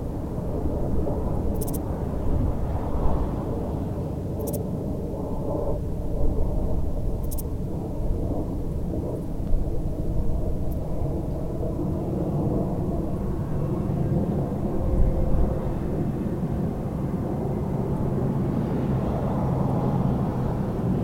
Lacey Ln, Olancha, CA, USA - Owens Lake Ambience: Planes, Insects, Traffic
Metabolic Studio Sonic Division Archives:
Owens Lake Ambience. Sounds of low flying aircraft, insects and traffic from Highway 395. Recorded on Zoom H4N
California, United States, 14 September